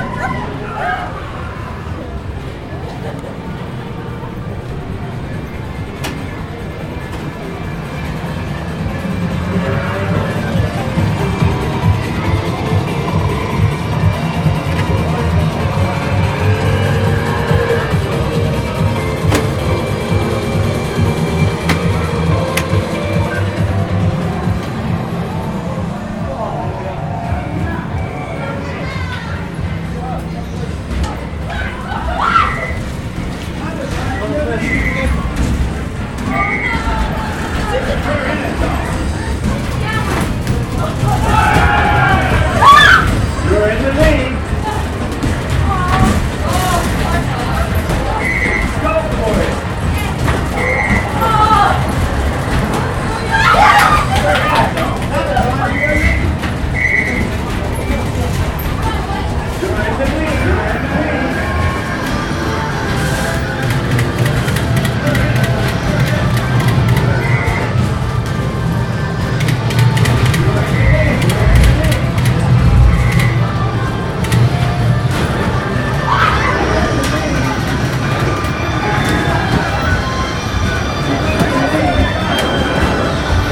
297 Queen St, Auckland 1010, New Zealand
A common typical Auckland arcade in New Zealand bustling with the life of children on holiday.
28 September 2010, ~13:00